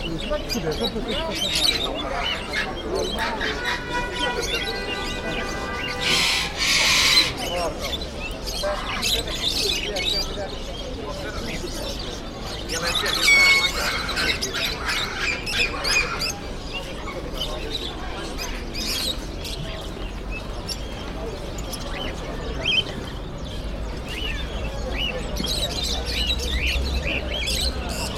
Largo Amor de Perdição, Porto, Portugal - Bird market on Sundays
22 March 2015, 12:15